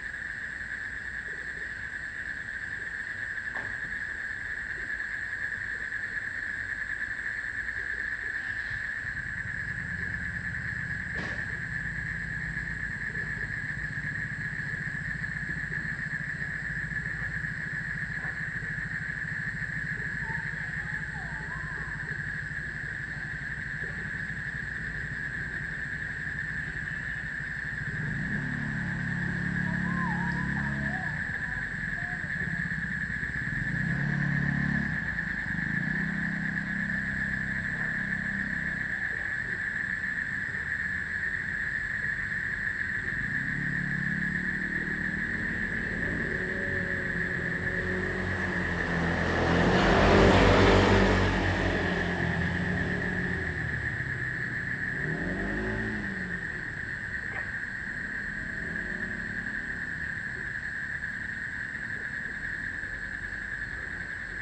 Frogs chirping, motorcycle, Next to the lotus pond, Dogs barking
Zoom H2n MS+XY